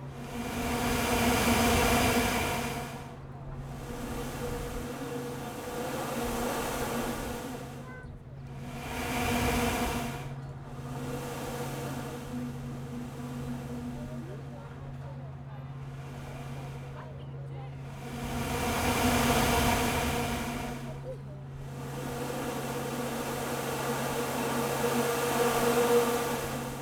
Air pushed through a vent pipe by the waves under the new pedestrian peer in Cais Do Sodre, Lisbon, Portugal. Background sounds of sea gulls, engine, people chatting. Originally, I thought it was a sound art piece as there are many of these vents singing on the peer, but Ive seen similar structures elsewhere. Recorded with a Zoom H5 with the standard XYH-5 head. Slight low frequency cut to remove excess wind noise and very light mastering.
12 February 2017, 14:49